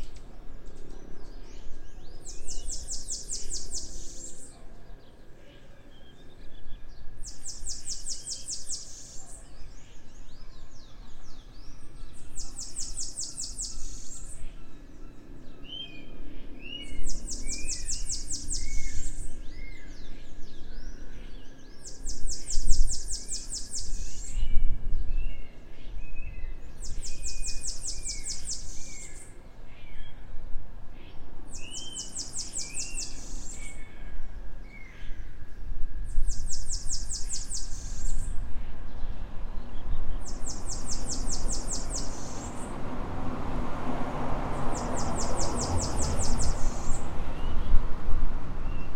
Cruz das Almas, BA, Brasil - Parque Sumaúma
Captacao de audio feita no parque sumaúma, bairro nobre localizado no centro da cidade de Cruz Das Almas-Bahia, Aparelho utilizado PCM DR40